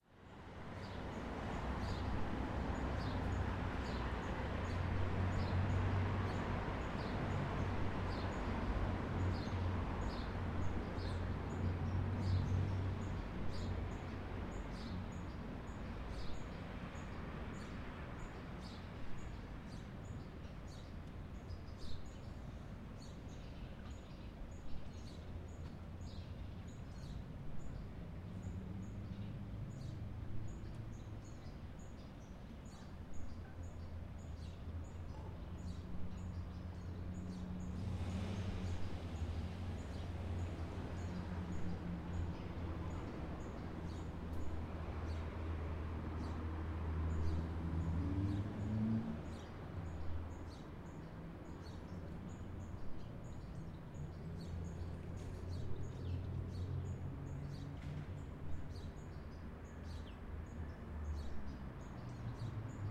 In den Kleingärten rund um das Nagelhaus, einer der letzten Liegenschaften aus dem 19. Jahrhunderts in diesem Stadtgebiet, haben sich Städter niedergelassen: Stadtvögel, ihr Gesang ist ohrenbetäubend. Stadtvögel singen lauter als Landvögel, darüber kann hier kein Zweifel bestehen. Der Verkehrslärm der viel befahrenen Ausfallstrasse ist in längeren Perioden rhythmisiert, gegeben durch die Phasen der Ampeln. Keine Grüne Welle. Plötzlich surrt eine fette Fliege durch das Klangbild.
Art and the City: Pierre Haubensak (Netz, 2011)